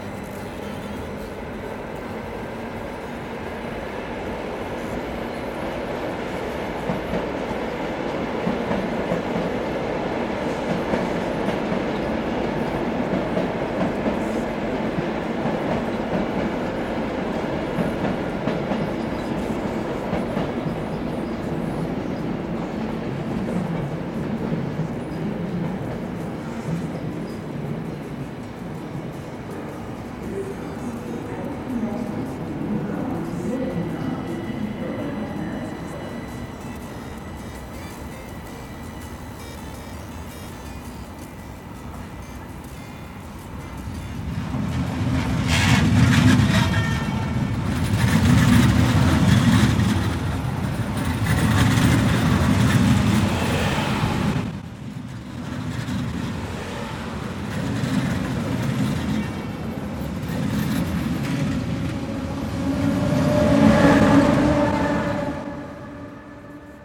I was trying to record some trains but I've catched a radio station. So, it's a mix of different realities : electromagnetic waves, synthetic voice, field recording, music, trains passing by